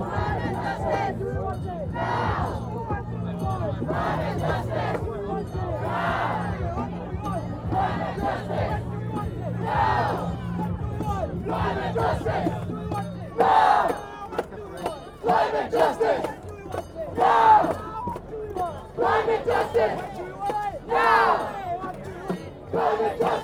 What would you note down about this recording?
Crowds chants 'Climate Justice' 'Power to the people' while arrests of the demonstrators sitting down to block the bridge take place. People are cheered and clapped as they are taken to the nearby police vans.